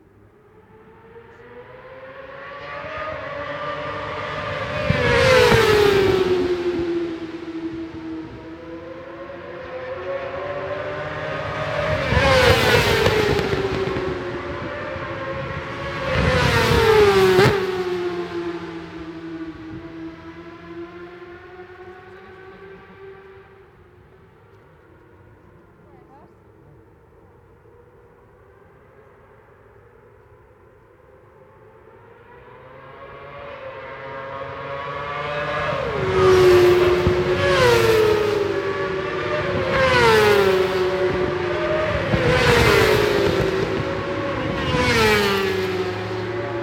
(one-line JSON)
{"title": "Brands Hatch GP Circuit, West Kingsdown, Longfield, UK - world superbikes 2004 ... supersport practice ...", "date": "2004-07-31 10:00:00", "description": "world superbikes 2004 ... supersport 600 practice ... one point stereo mic to minidisk ... time approx ...", "latitude": "51.35", "longitude": "0.26", "altitude": "151", "timezone": "Europe/London"}